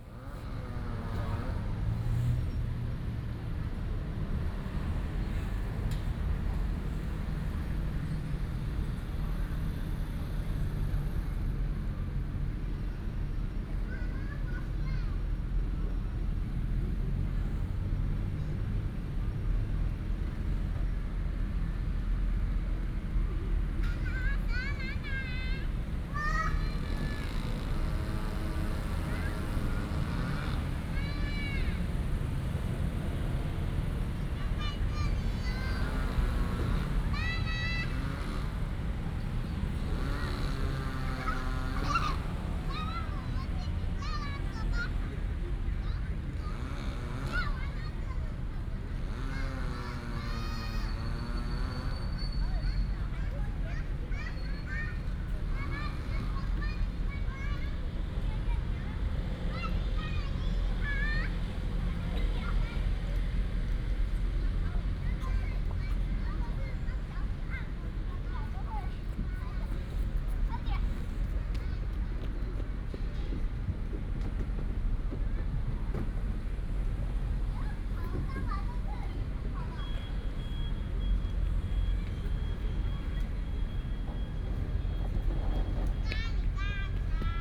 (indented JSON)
{
  "title": "Chongsheng St., Yilan City, Yilan County - in the Park",
  "date": "2016-11-18 16:33:00",
  "description": "in the Park, Traffic sound, Children's play area, Construction sound",
  "latitude": "24.75",
  "longitude": "121.75",
  "altitude": "13",
  "timezone": "Asia/Taipei"
}